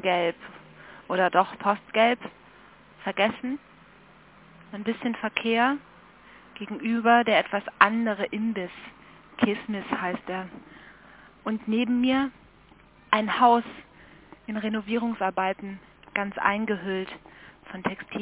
Telefonzelle, Sonnenallee, Berlin - Verhülltes Haus 22.04.2007 13:35:10